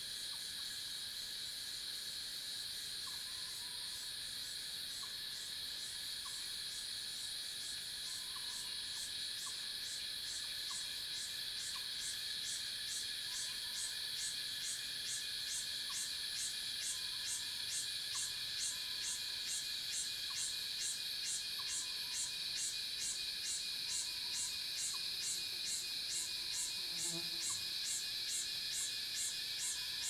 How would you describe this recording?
Cicada sounds, Bird sounds, In the morning, Zoom H2n MS+XY